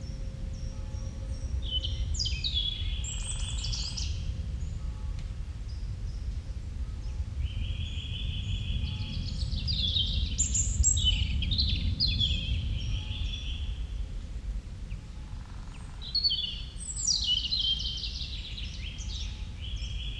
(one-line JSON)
{"title": "Morning sounds in Lagoni di Mercurago natural park.", "date": "2010-03-28 17:30:00", "description": "Italy, Arona. Nature park. Robin singing, airplane flying over, distant churchbell.", "latitude": "45.74", "longitude": "8.55", "altitude": "310", "timezone": "Europe/Berlin"}